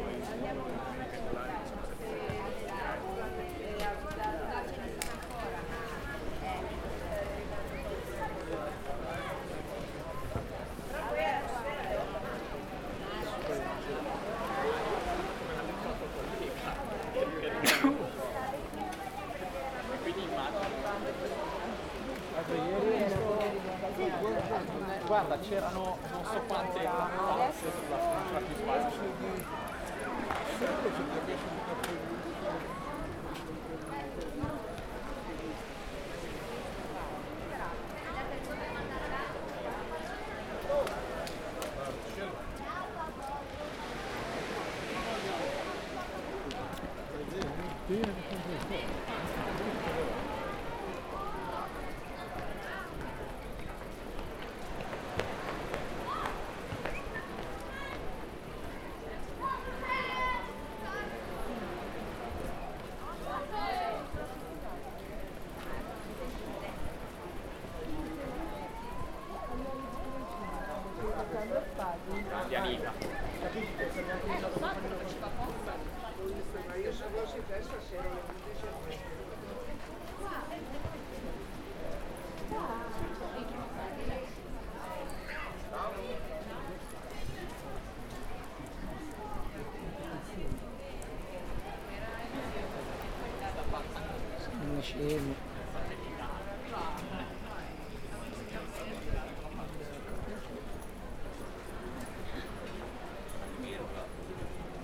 Camogli Genua, Italien - Flanieren und Leben geniessen
Spaziergang entlang der Küste, vorbei an Baren mit Musik und Menschengruppen im Gespräch. Der Duft von Meer und feinen Speisen in der Luft.
Camogli Genoa, Italy, 28 March